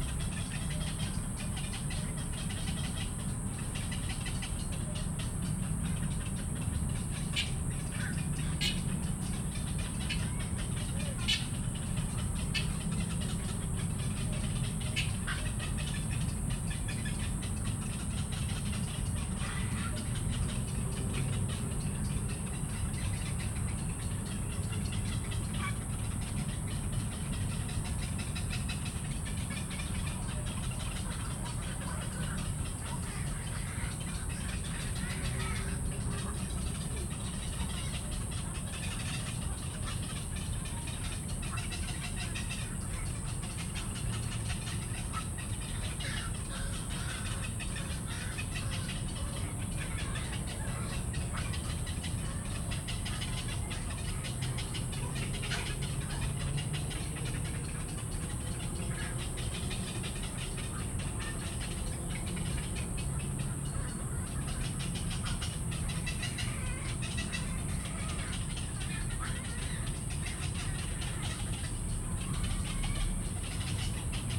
大安森林公園, 大安區 Taipei City - Bird sounds
Next to the ecological pool, Bird sounds, Voice traffic environment
Zoom H2n MS+XY+Sptial audio
Taipei City, Taiwan, 17 August 2016